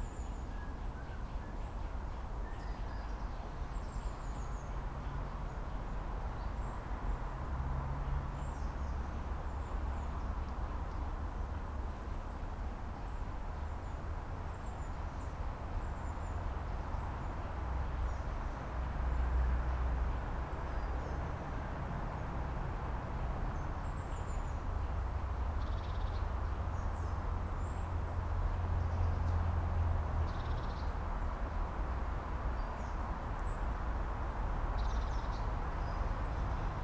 Asker, Norway, forest and subway